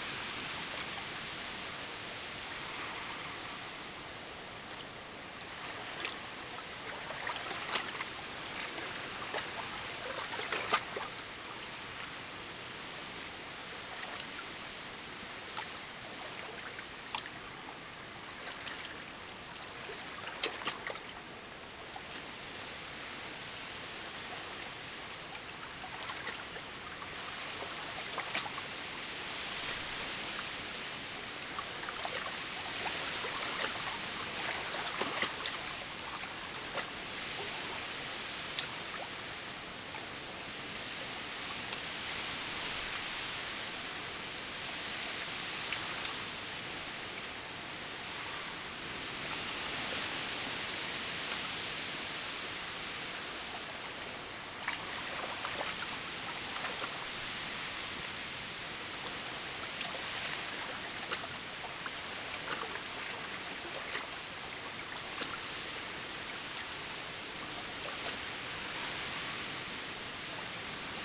stormy late afternoon, small pier, wind blows through reed, coot calls
the city, the country & me: june 13, 2015